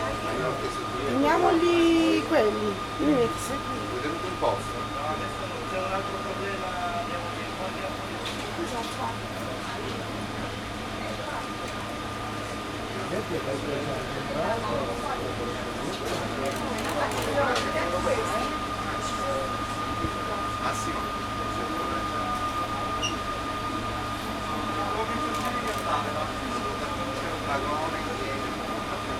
take the Cage train, partenza, il treno lascia la stazione, 31/maggio/2008 h14.30 ca